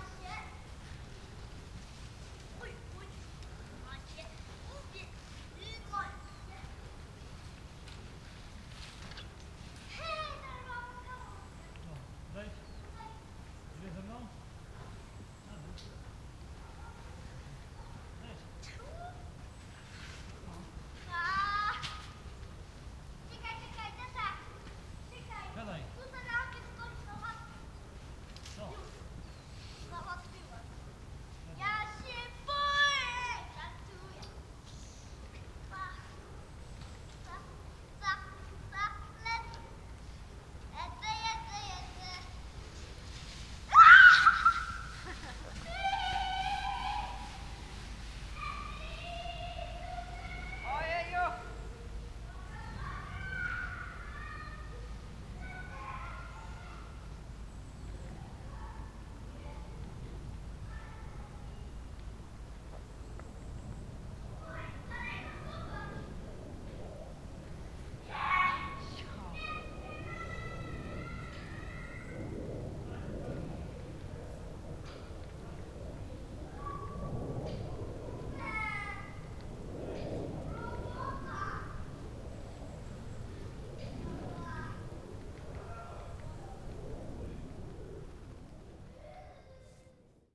Morasko Nature Reserve - top of the hill
recorded on the top of Moraska hill. It's the highest point in the Poznan area at 153m. Some winter ambience, family approaching and sledding down the hill. (dony d50)